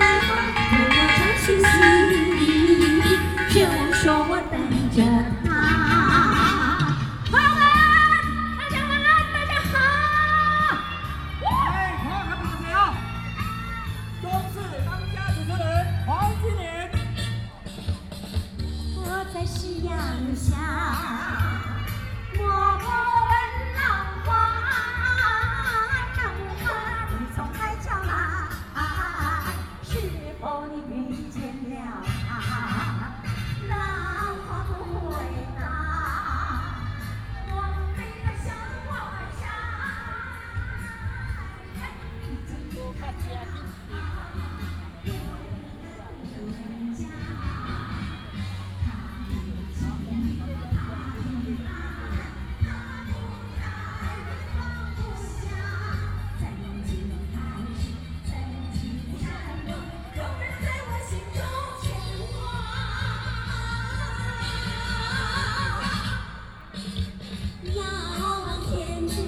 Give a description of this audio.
Walking around in the park, Traffic Sound, Sony PCM D50+ Soundman OKM II